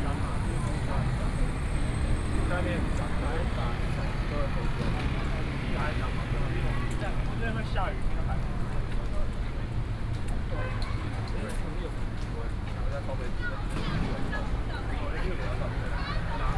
Minzu Rd., Hsinchu City - soundwalk
High school students on the streets after school, Traffic Noise, Sony PCM D50 + Soundman OKM II
Hsinchu City, Taiwan